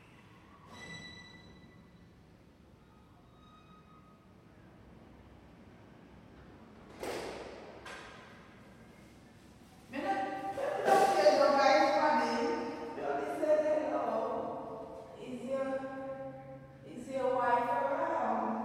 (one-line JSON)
{"title": "Uk - Int Ambience 2 Weyland House corridor – Robsart Street SW9 London", "date": "2010-08-20 13:06:00", "description": "Urban landscape. A high tower coucil block, ovepopulated.\nRecording interior wildtracks trying to fit the busy buildings life.", "latitude": "51.47", "longitude": "-0.11", "timezone": "Europe/London"}